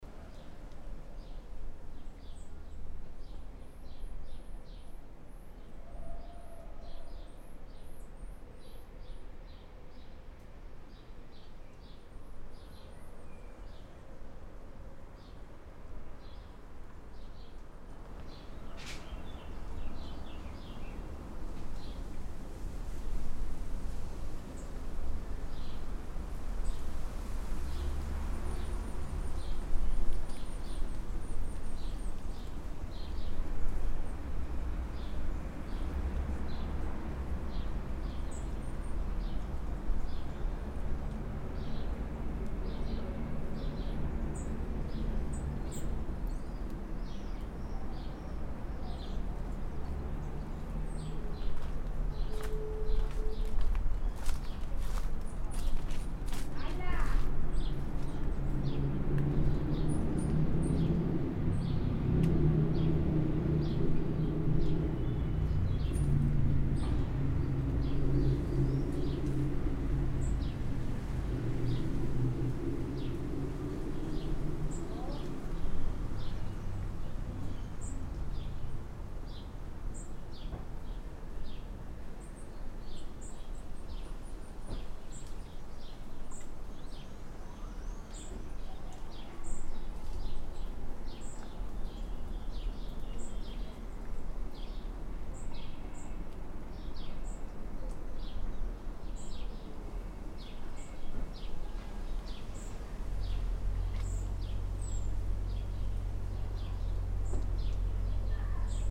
Perugia, Italia - bamboo wood
a small bamboo wood, wind, leaves, birds, traffic
Perugia, Italy, May 2014